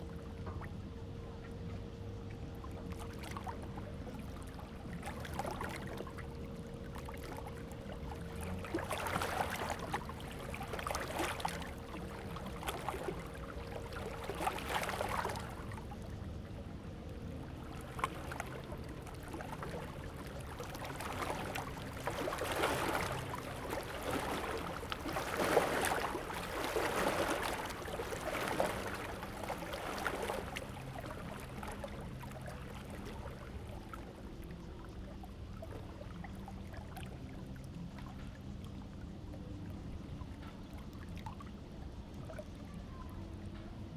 Lake Sound, There are yachts on the lake
Zoom H2n MS+ XY